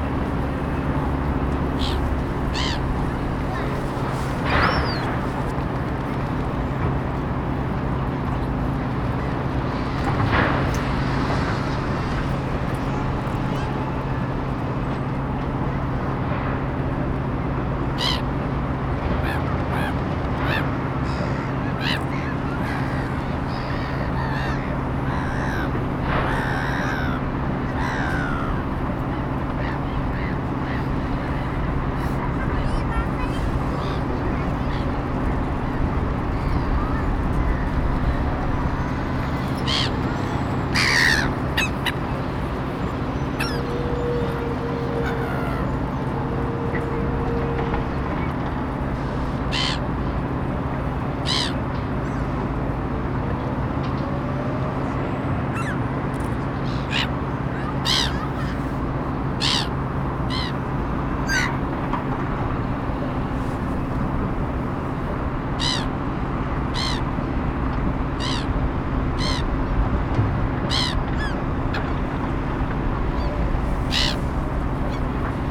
Oslo, Opera House [hatoriyumi] - Gabbiani, bambini e traffico lontano
Gabbiani, bambini e traffico lontano